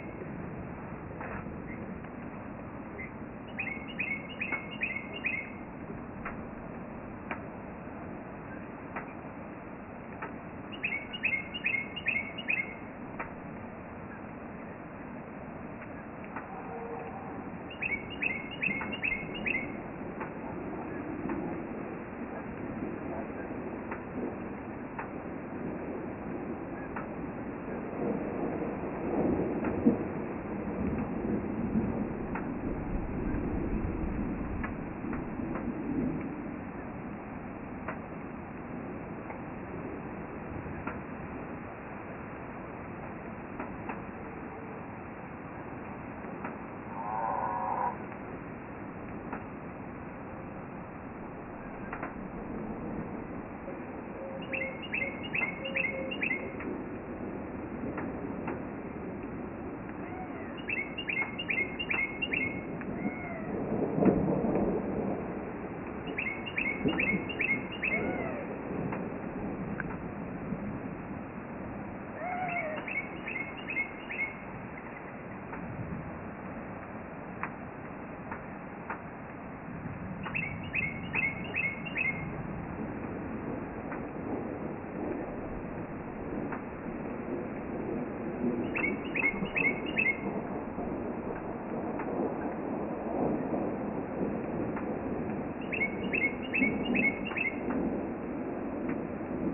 Wauchula, FL, USA - Passing Storms
Quiet morning
distant storm approaches
storm arrives
rain on metal roof
storm moves away